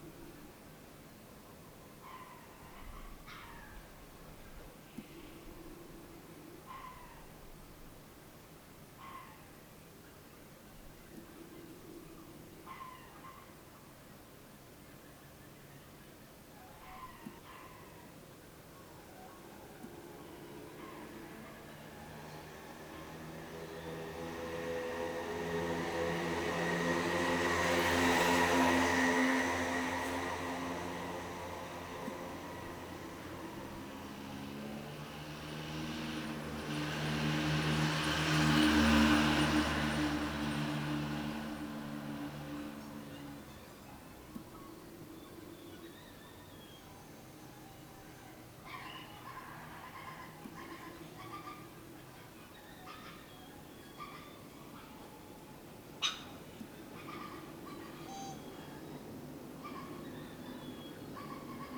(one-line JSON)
{"title": "Nullatanni, Munnar, Kerala, India - dawn in Munnar - over the valley 4", "date": "2001-11-05 06:29:00", "description": "dawn in Munnar - over the valley 4", "latitude": "10.09", "longitude": "77.06", "altitude": "1477", "timezone": "Asia/Kolkata"}